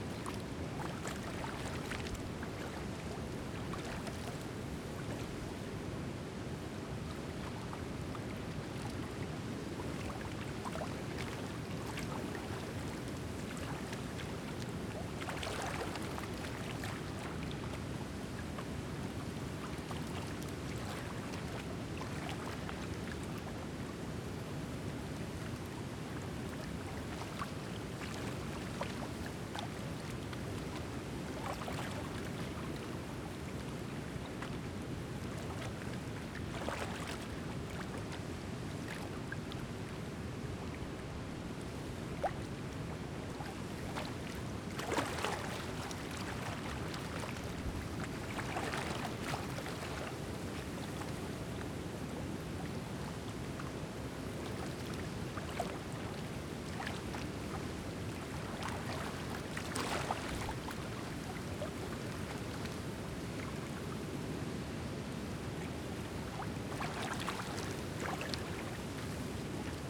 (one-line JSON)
{"title": "Willow River State Park - New Dam - Willow River State Park Dam - Bottom", "date": "2022-03-23 12:33:00", "description": "Recorded at the bottom of the dam near the river. The roar of the dam can be heard to the right and the water lapping against the rocks can be heard directly in front . I hove the recorder a couple times during the recording", "latitude": "45.02", "longitude": "-92.71", "altitude": "222", "timezone": "America/Chicago"}